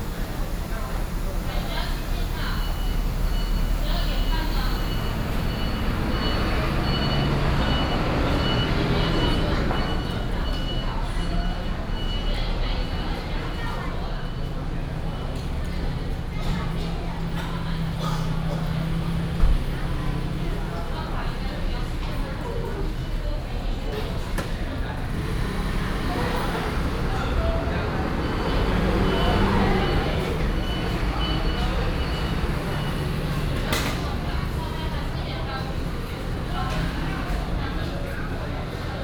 Puli Bus Station, Nantou County - In the bus station hall
bus station, In the station hall
Nantou County, Taiwan